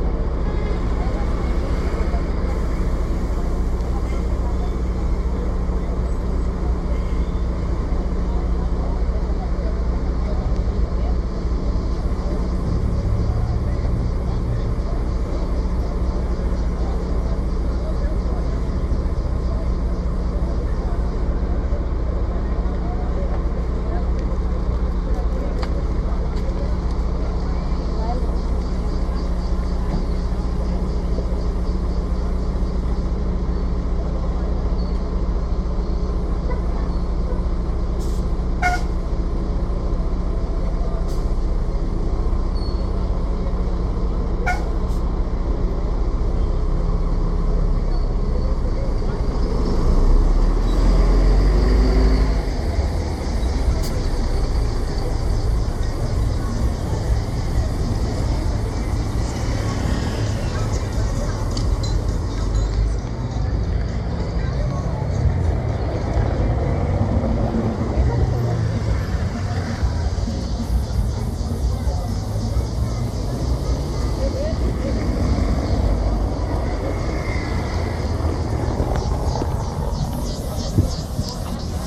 {
  "title": "Cachoeira, BA, Brasil - Waiting for the bus",
  "date": "2014-04-04 05:40:00",
  "description": "Recorded audio while we waiting for the intercity bus, in the bus stop next door to the local hospital and the market.",
  "latitude": "-12.60",
  "longitude": "-38.96",
  "altitude": "10",
  "timezone": "America/Bahia"
}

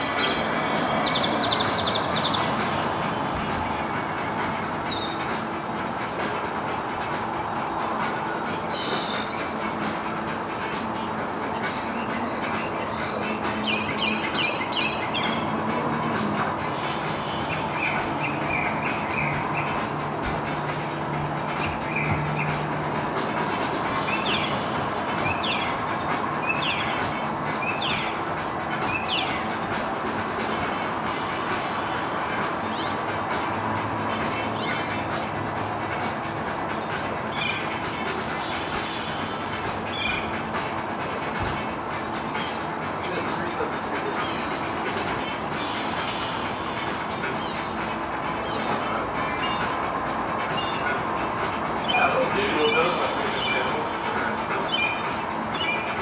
{
  "title": "Mockingbird Serenades Dance Party of Three, Neartown/ Montrose, Houston, TX, USA - Mockingbird at Susan's",
  "date": "2013-04-06 02:53:00",
  "description": "Northern Mockingbirds are Urban-Positive! This guy was blasting his best for many hours while we drank and danced around my friend's apartment until nearly dawn.\nSony PCM D50",
  "latitude": "29.74",
  "longitude": "-95.39",
  "altitude": "17",
  "timezone": "America/Chicago"
}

